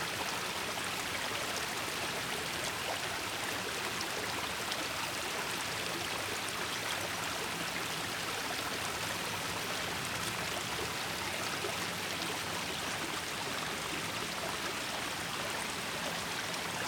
Saint-Victor, Marseille, Frankreich - Marseille, Jardin de la colline Puget - Small stream
Marseille, Jardin de la colline Puget - Small stream.
[Hi-MD-recorder Sony MZ-NH900, Beyerdynamic MCE 82]
12 August, ~16:00